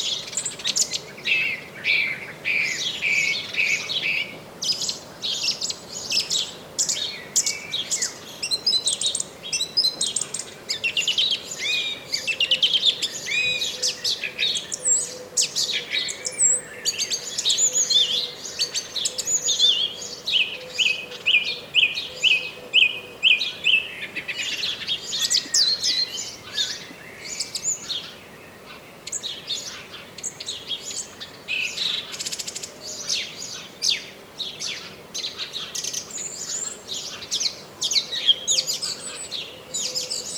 Chemin des Ronferons, Merville-Franceville-Plage, France - Many birds, beautiful songs
Many birds today, Zoom H6 + Rode NTG4+